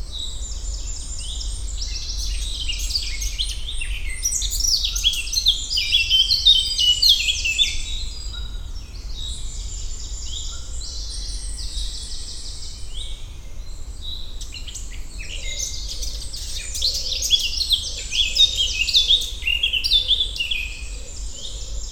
Eurasian Blackcap solo. Common Chiffchaff announcing bad weather (the repetitive toui ? toui ? toui ?)
Montigny-le-Tilleul, Belgium, 3 June 2018